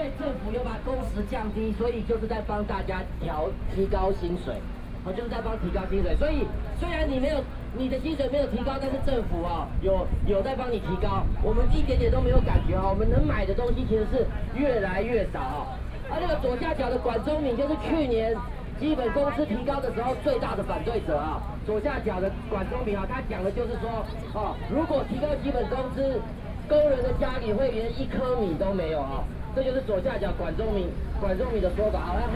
Chiang Kai-Shek Memorial Hall, Taipei City - Labor protests
Shouting slogans, Labor protests, Lost shoe incident, Binaural recordings, Sony PCM D50 + Soundman OKM II